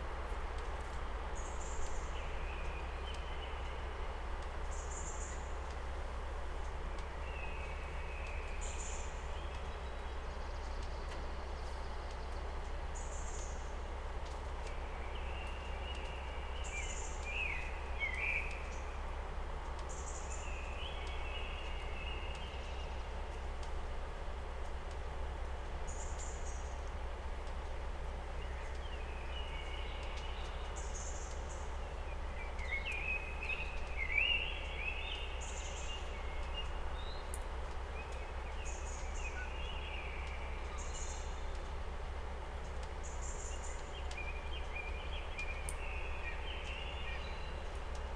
Quarentine Night birds - Olivais Centro Cívico, 1800-077 Lisboa, Portugal - Quarentine Night birds
During quarentine (March 2020), the night birds are more audible (active), because of the lack of human produced sound. Recorded from my window with a SD mixpre6 and a pair of Primo 172 Clippy's in AB stereo configuration (3 meters apart).